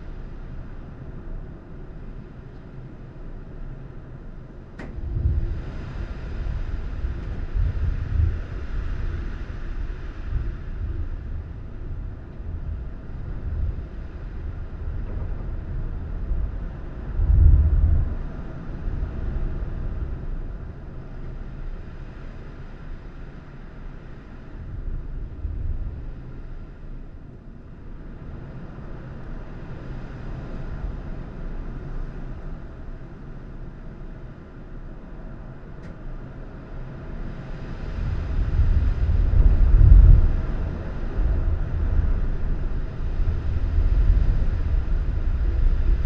Trégastel, France - Heavy wind from inside a house
Vent violent entendu depuis derrière la fenêtre.
Heavy wind from inside a house, recorded at the windows.
/Oktava mk012 ORTF & SD mixpre & Zoom h4n